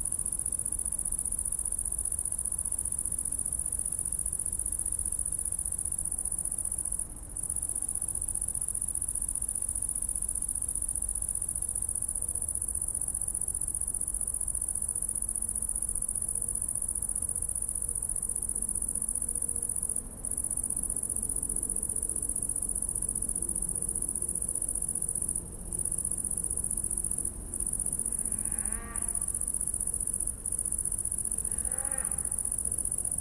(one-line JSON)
{"title": "Berlin Buch, Lietzengraben - cricket and high voltage", "date": "2022-08-14 21:15:00", "description": "Berlin Buch, Lietzengraben ditch, summer night, warm and humid, electric crackling from high voltage line and a cricket nearby. Autobahn noise from afar.\n(Sony PCM D50, Primo EM172)", "latitude": "52.64", "longitude": "13.46", "altitude": "50", "timezone": "Europe/Berlin"}